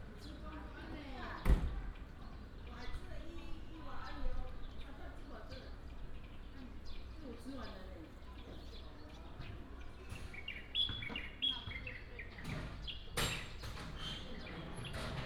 太麻里鄉大溪國小, Taitung County - Near primary school
Village main street, Bird cry, traffic sound, Near primary school, Construction sound
Taitung County, Taimali Township, 大溪土板產業道路